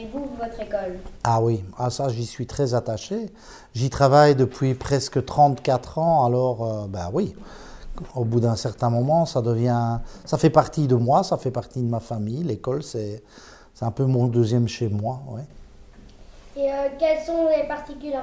Court-St.-Étienne, Belgique - The school director
Children ask questions to their school director, as these children want to learn how to become a press reporter.
Court-St.-Étienne, Belgium